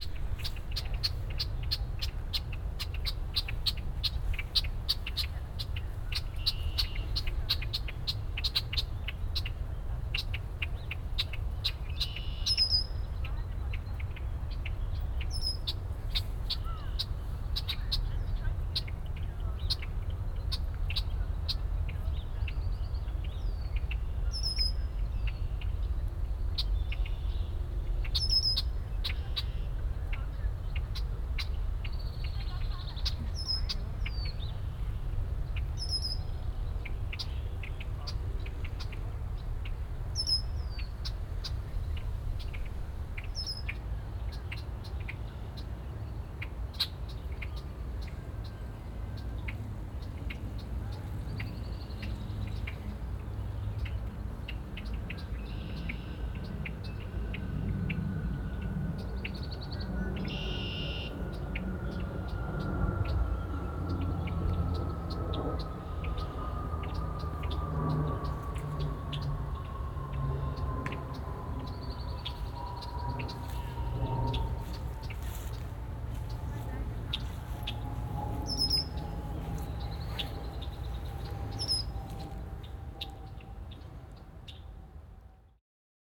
Montreal: Parc Rene Levesque (Lachine) - Parc Rene Levesque (Lachine)
equipment used: Olympus LS-10 & OKM Binaurals
birds singing at Parc Rene Levesque on the Lachine Canal